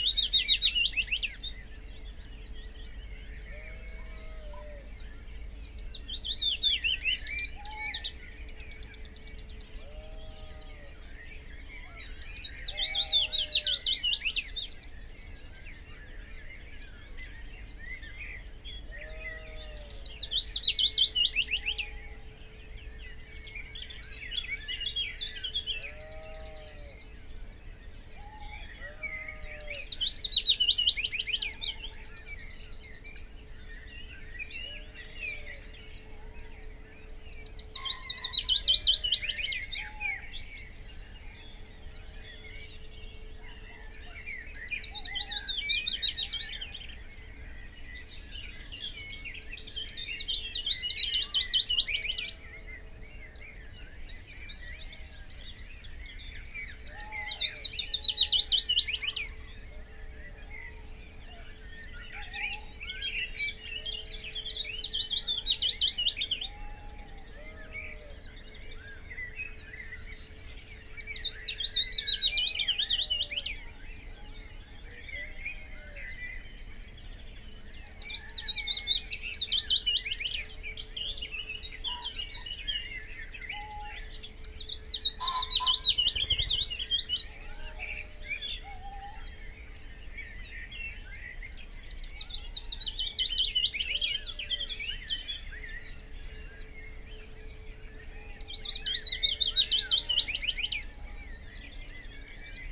Green Ln, Malton, UK - Willow Warbler at sunrise ...
Willow warbler song and call soundscape ... open lavaliers clipped to branch ... songs and calls from ... tawny owl ... common pheasant ... crow ... magpie ... garden warbler ... whitethroat ... yellowhammer ... song thrush ... blackbird ... dunnock ... wren ... some background noise ...